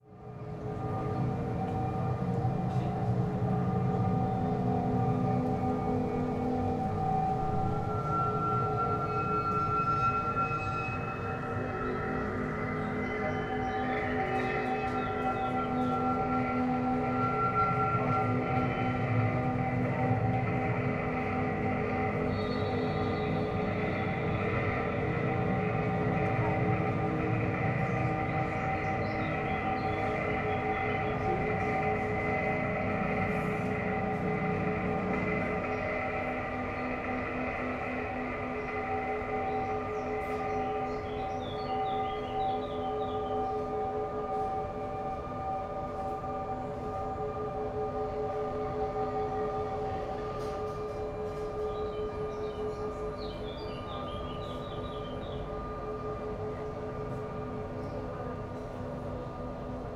lisbon goethe institut - sound installation
sound installation in the garden of goethe institute